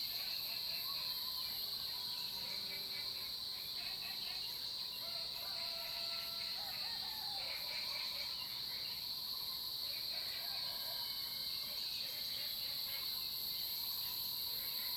綠屋民宿, 埔里鎮桃米里 - In the morning
In the morning, Bird calls, Crowing sounds, Cicadas cry, Frog calls
Zoom H2n MS+XY
Puli Township, Nantou County, Taiwan, 12 June